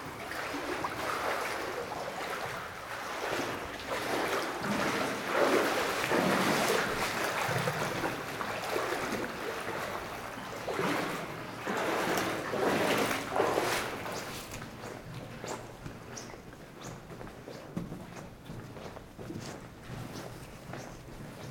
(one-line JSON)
{"title": "Audun-le-Tiche, France - Walking in water", "date": "2015-11-21 07:15:00", "description": "In an underground mine, to get into the \"Butte\" mine, we are forced to walk in a 1m30 deep water. It could get hard for material...", "latitude": "49.45", "longitude": "5.94", "altitude": "402", "timezone": "Europe/Paris"}